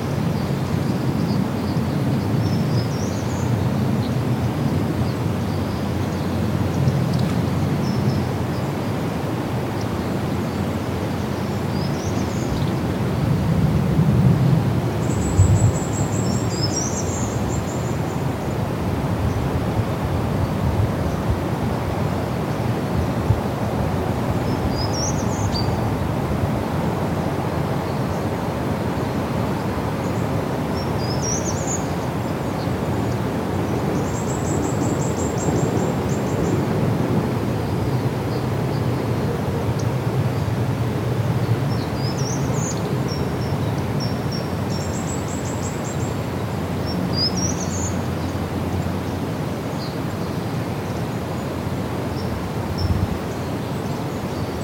erkrath, neandertal, wildgehegehimmel
morgens am wildgehege - am himmel überflug der verkehrsmaschinen in richtung düsseldorf flughafen
soundmap nrw:
social ambiences/ listen to the people - in & outdoor nearfield recordings